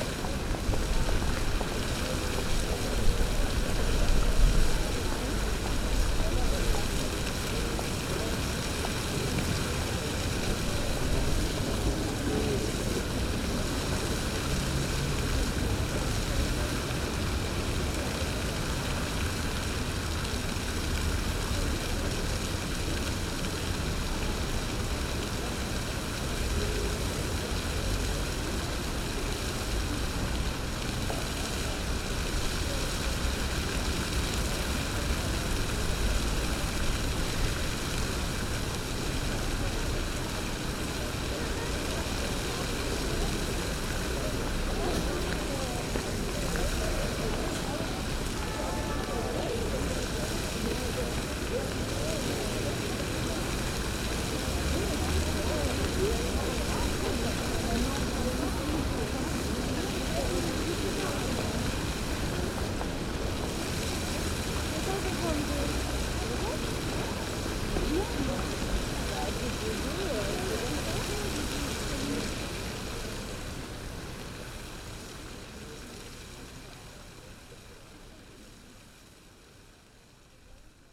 Sur les escaliers de la place Pradel démarrage du jet d'eau de la fontaine sculpture. Les passants.